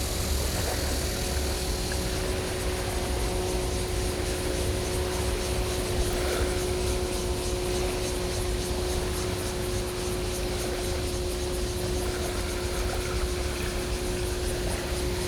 Tamsui River, Wugu Dist., New Taipei City - Tide
Tide, Cicada sounds
Zoom H4n+ Rode NT4
New Taipei City, Taiwan, 6 July 2012, ~18:00